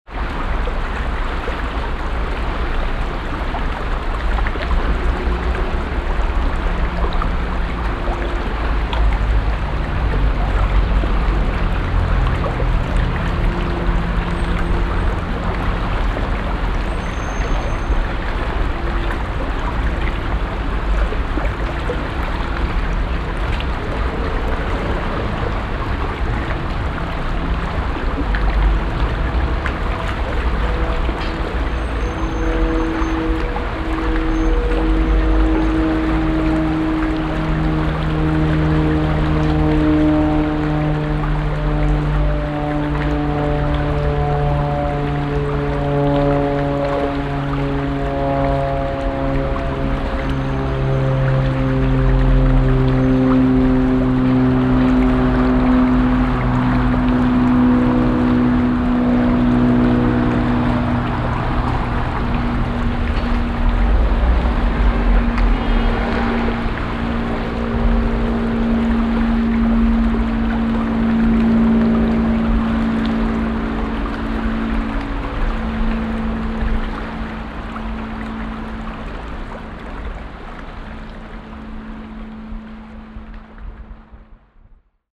hilden, itter im stadtzentrum
itter durchfluss in eingegrenztem stadtflussbett, strassenverkehr und passanten, nachmittags
soundmap nrw:
social ambiences/ listen to the people - in & outdoor nearfield recordings